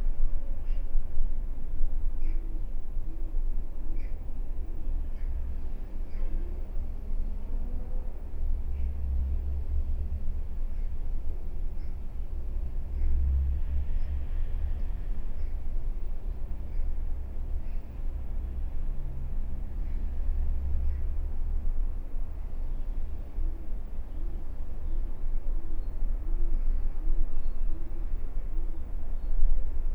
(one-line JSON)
{"title": "Cressingham Rd, Reading, UK - The Retreat Cabin", "date": "2018-01-30 12:50:00", "description": "A ten minute meditation in the retreat cabin at the bottom of the garden of Reading Buddhist Priory (Spaced pair of Sennheiser 8020s + SD MixPre6)", "latitude": "51.43", "longitude": "-0.96", "altitude": "58", "timezone": "Europe/London"}